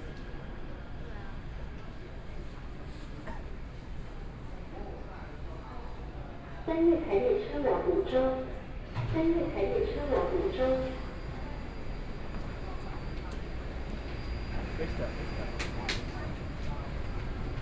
from Zhongxiao Xinsheng Station to Minquan West Road station, Binaural recordings, Sony PCM D50 + Soundman OKM II